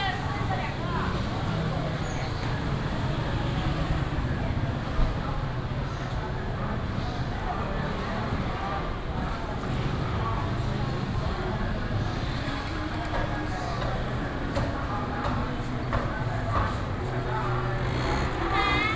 Nanjing Rd., East Dist., Taichung City - Walking through the market
Walking through the traditional market